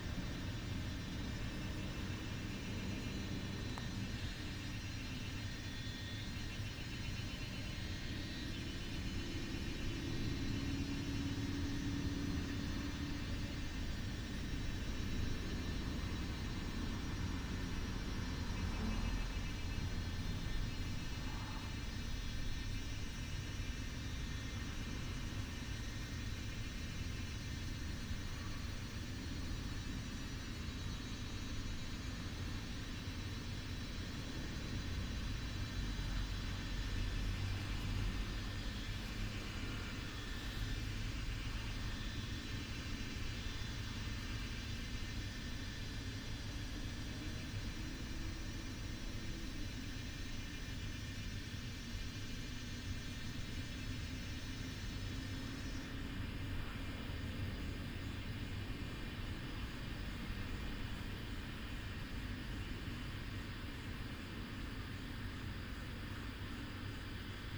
Taomi Ln., 埔里鎮種 Puli Township - In the parking lot
In the parking lot, Cicada sounds, Traffic Sound